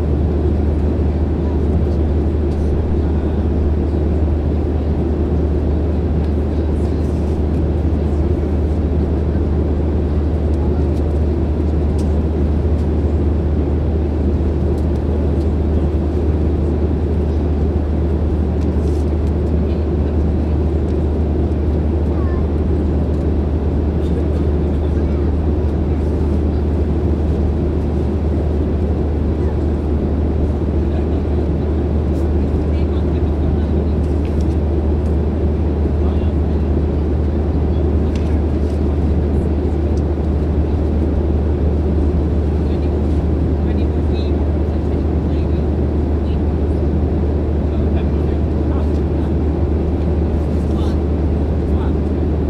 9F22CF28+CP - 737-800 cabin ambience
Somewhere over the English Channel sitting in seat 27F LGW to JSI